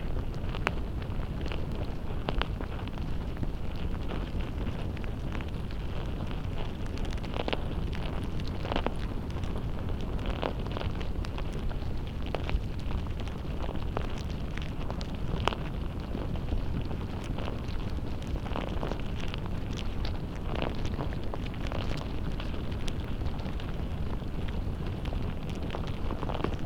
Mizarai, Lithuania, ant nest
through all my years of fieldrecording ants never stop to fascinate me. contact microphones
Alytaus apskritis, Lietuva, 9 September 2022